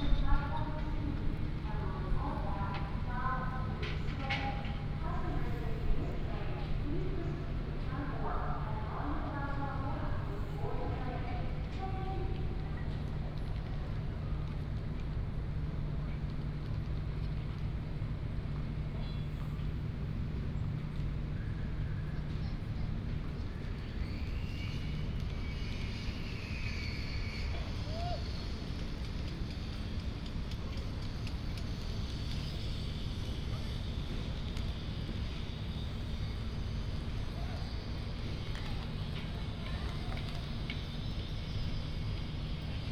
THSR Hsinchu Station, Zhubei, Hsinchu County - In the square
In the square outside the station, Station Message Broadcast sound, Building the sound of construction